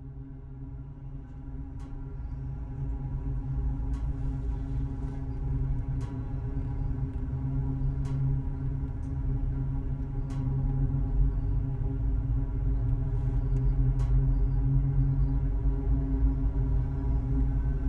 small microphones placed in two found long and tiny tubes. droney, reverberating town...
Utena, Lithuania, July 2014